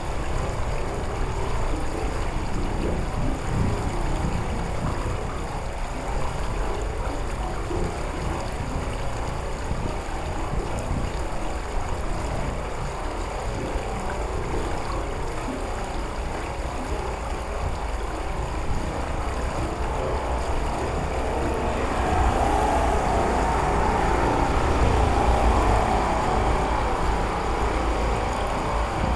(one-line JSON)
{"title": "Utena, Lithuania. inside the railings", "date": "2020-11-27 18:15:00", "description": "small microphones pkaced inside the railings pipe. strange warbled resonances", "latitude": "55.50", "longitude": "25.60", "altitude": "104", "timezone": "Europe/Vilnius"}